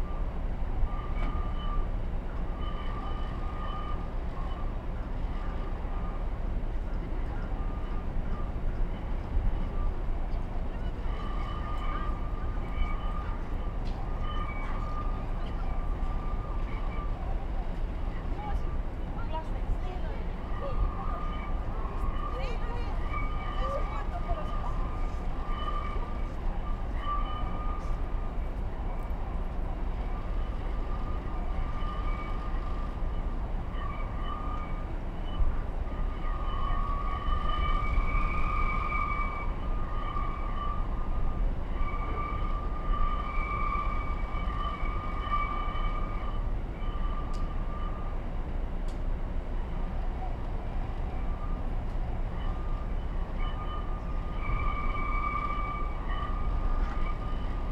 Tallinn-Helsinki ferry
on a sea road to Helsinki
Kelnase, Harju maakond, Estonia, October 2017